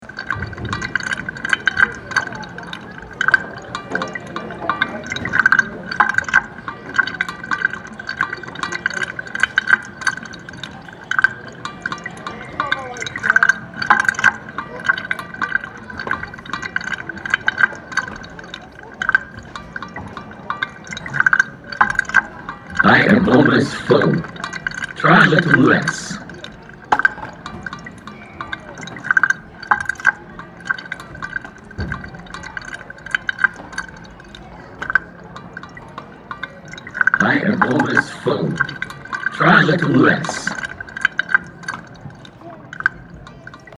Møhlenpris, Bergen, Norwegen - Bergen - science center Vilvite, food roboter 02
a second recording of the same roboter - more close and direct.
international sound scapes - topographic field recordings and social ambiences